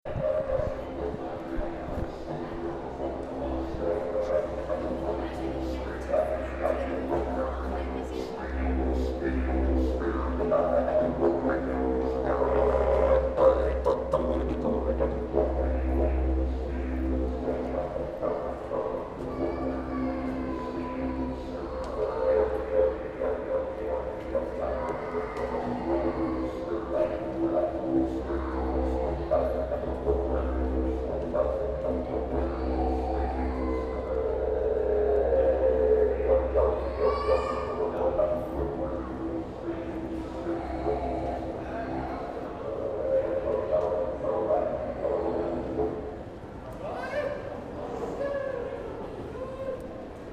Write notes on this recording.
Didgeridoo player in pedestrian underpass